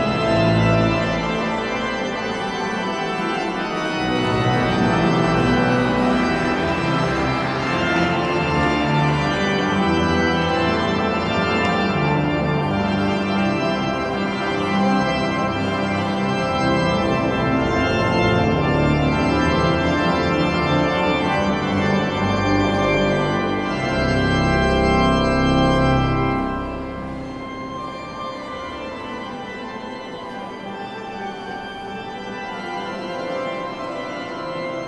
End of the mass in the Sint-Rombouts cathedral. Baptisms of children and organ, people going out of the cathedral, silence coming back.